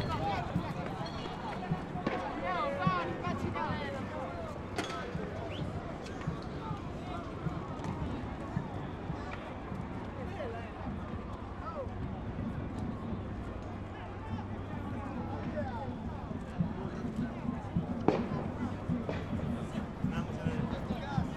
Rome Riot
The explosions are provoked by demostrants homemade bomb
Rome, Italy, 16 October 2011